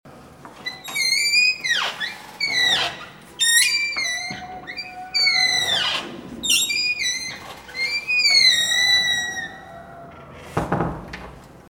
Porte de la loge, collège de Saint-Estève, Pyrénées-Orientales, France - Porte de la loge
La porte de la loge.
Preneuse de son : Aurélia.
17 February 2011